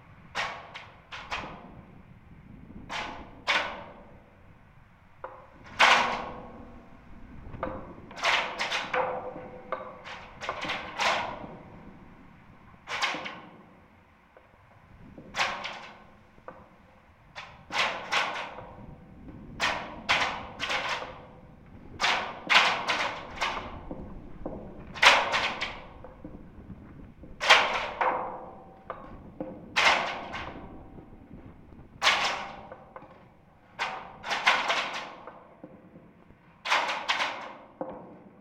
Traffic island, road, Reading, UK - Clanging wires inside a lamp post
On several occasions I'd noticed that the cables inside a lamp post on the A33 clang interestingly in the wind. It is as though long cables travel inside the lamp-post, and clang and swish around in high winds... it's quite a subtle sound and because it's on a dual carriageway, bordered on each side by dense, fast-moving traffic, I thought that isolating the sound from the environment by using a contact microphone might better help me to hear it. In this recording I attached a contact microphone to the lamp post with blu-tack and recorded in mono to my EDIROL R-09. I think it's amazing - you can really hear the wires twisting about inside the lamp-post, and whipping in the wind. I recorded from outside too, so you can hear the contrast, but I love knowing that this sound is happening whenever there is wind. I also love that it seems like a mistake - none of the other tall lamp posts make this sound, so maybe something isn't secured.
12 January 2015